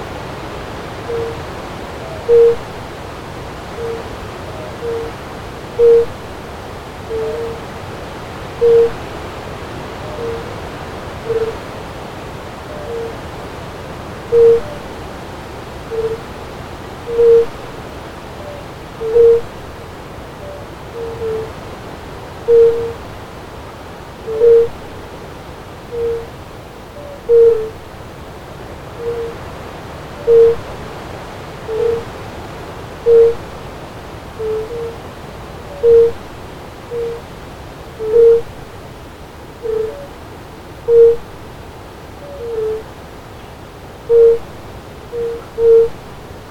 A couple or more fire-bellied toads (Bombina bombina) singing during a windy day. Recorded with Olympus LS-10.